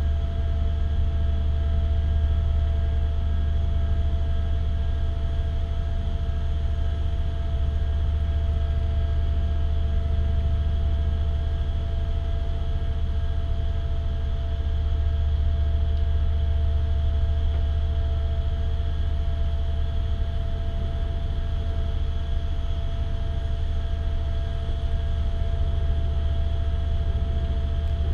Morasko, field road near train tracks - power generators
(binaural) recorded a few meters away from two commercial power generators. sounds of the nearby sewage system construction site.
Poznan, Poland, 7 November, 13:40